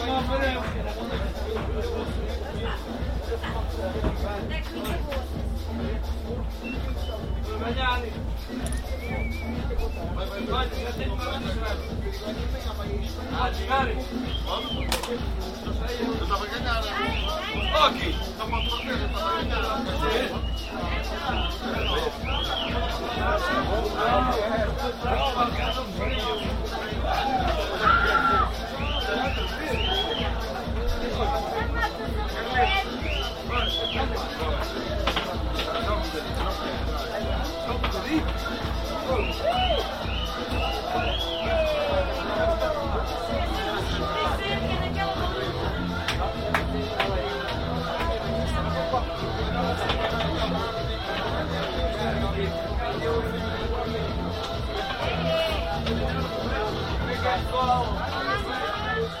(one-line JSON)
{"title": "2 carroça. portugal-spain border (A.Mainenti)", "latitude": "40.61", "longitude": "-6.84", "altitude": "767", "timezone": "Europe/Berlin"}